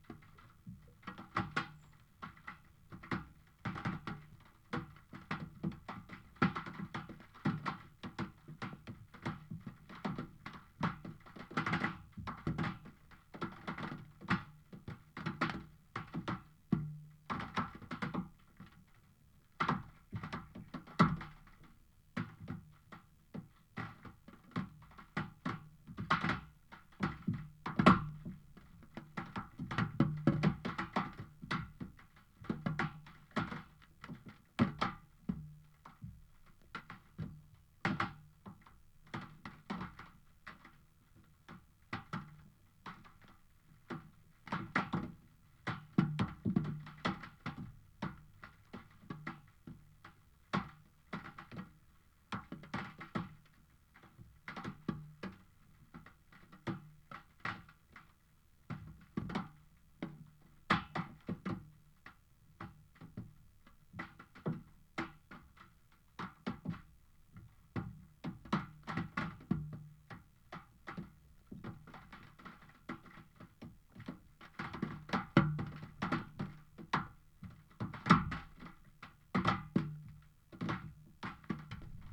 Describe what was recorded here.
Following misty drizzly morning recorded rain drops falling onto abandoned corrugated iron sheet under hedge (also light rain and eerie sound of plane passing). Tascam DR-05 with homemade contact microphone.